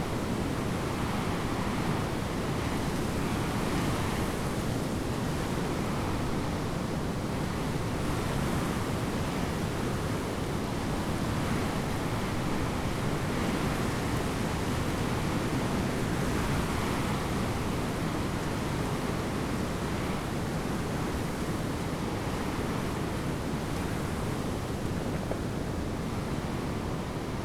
mirns: mirnser kliff - the city, the country & me: reed bends in the wind
reed bends in the wind
the city, the country & me: june 23, 2013